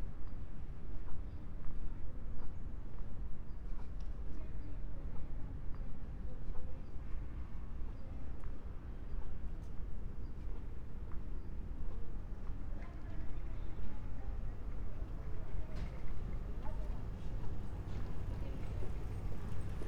chome asakusa, tokyo - evening streets
quiet streets, bicycles, cars, sounds from behind the windows and doors
Tokyo, Japan, 9 November